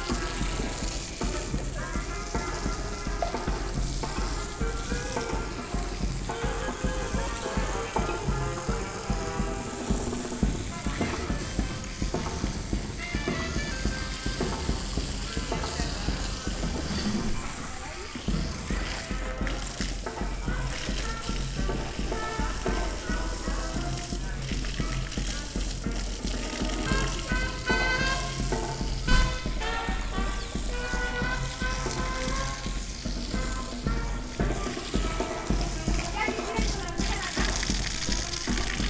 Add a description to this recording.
Two women are cleaning up the place after the weekend. The party people at night have left tons of waste, which is a constant source of annoyance to neigbours and the school nearby, who try to keep the place in a good shape.